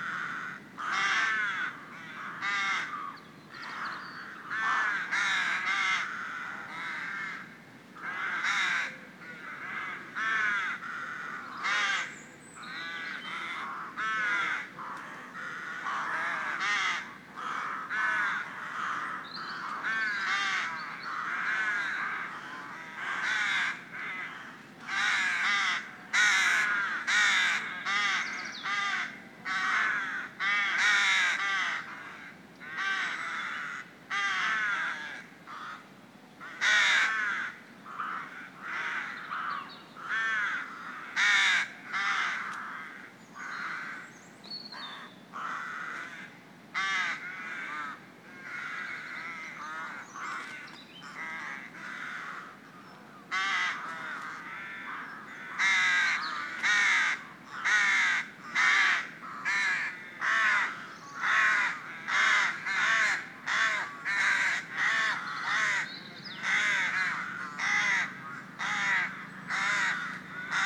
Pl View Rd, St Mawes, Truro, UK - Rookery
I got the ferry from Falmouth to St Maws in a stiff westerly wind, but on landing and only after a short walk I came across a lovely sheltered valley with a large Rookery in it. The sound of the sea in the background along with a few seagulls help to set the scene. Sony M10 built-in mics.
22 March, ~12:00